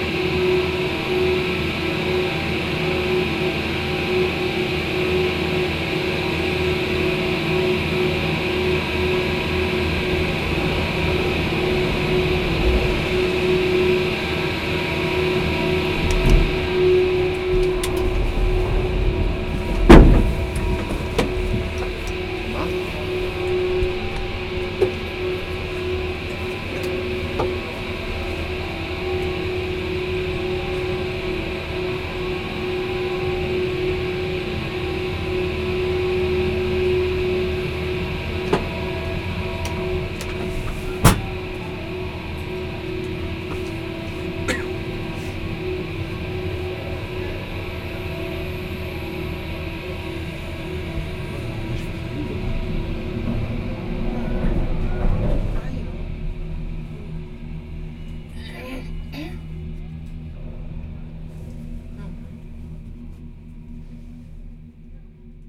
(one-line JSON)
{"title": "Luftseilbahn Wasserfallen nach Waldenburg Baselland", "date": "2011-06-12 16:12:00", "description": "Seilbahn Wasserfallen nach Waldenburg, Einstieg laufend", "latitude": "47.37", "longitude": "7.70", "altitude": "922", "timezone": "Europe/Zurich"}